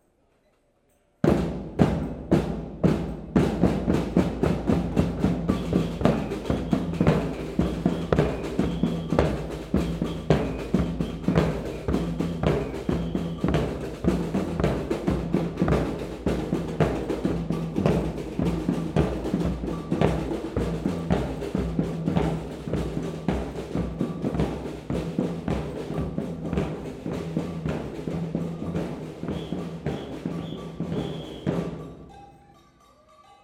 Leuven, Belgique - Gay pride parade
Gay pride parade passes through the city, with drums and whistles.
Leuven, Belgium